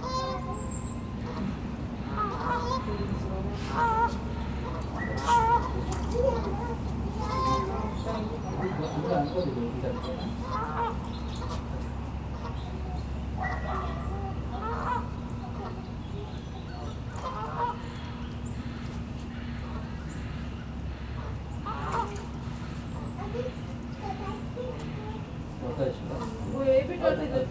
Recording at a pond in a neighborhood park. The area is surrounded by traffic, which bleeds heavily into the recording. Birds are heard throughout. There were lots of geese, especially towards the end of the recording. There are frogs off to the right, but they're difficult to hear due to being masked by louder sounds. A group of people walked down to the gazebo next to the recorder around halfway through the session.
The recording audibly clipped a couple times when the geese started calling right in front of the recording rig. This was captured with a low cut in order to remove some of the traffic rumble.
[Tascam Dr-100mkiii & Primo EM-272 Omni mics]